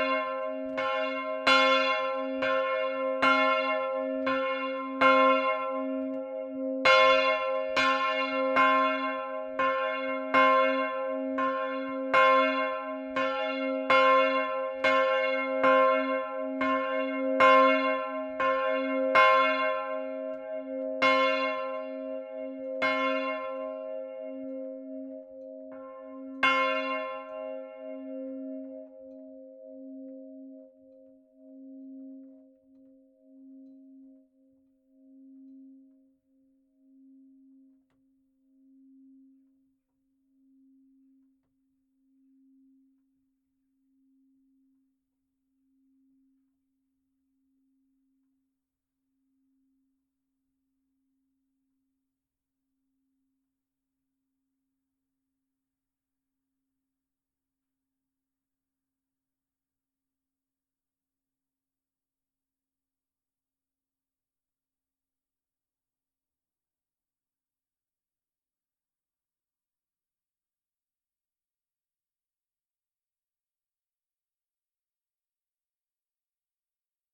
Rue aux Cordiers, Longny les Villages, France - Longny au Perche- Chapelle Notre Dame de Pitié
Longny au Perche
Chapelle Notre Dame de Pitié
Volée
France métropolitaine, France